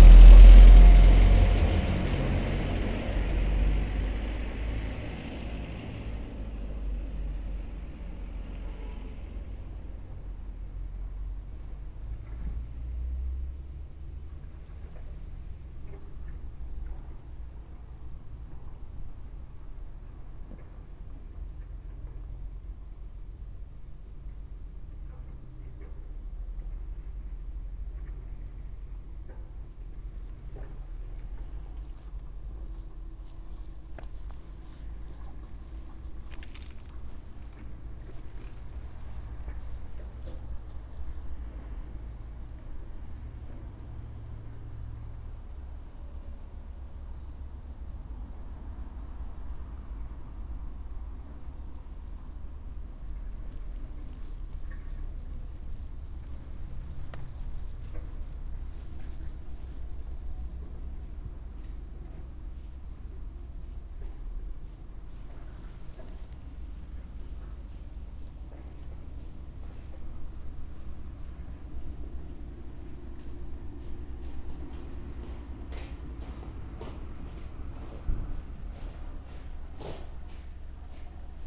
Grožnjan, Croatia - situation with two cats
two cats, one of them silent, walk, cars and toot, passer by, trash can, dry leaves
28 December 2012